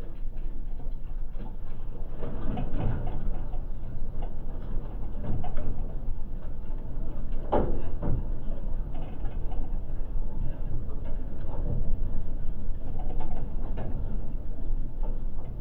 Utena, Lithuania half abandoned building fence
Industrial zone. Half abandoned warehouse. Metallic fence, contact microphones.
Utenos apskritis, Lietuva, September 2021